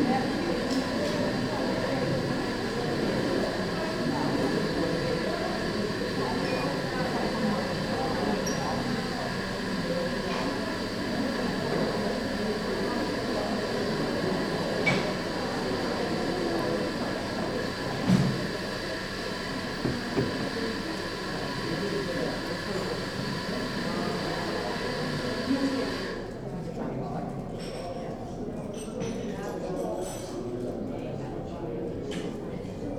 Museum Boijmans van Beuningen, Rotterdam, Nederland - Museum Restaurant
General atmosphere in the museums restaurant.
Zoom H2 recorder internal mics.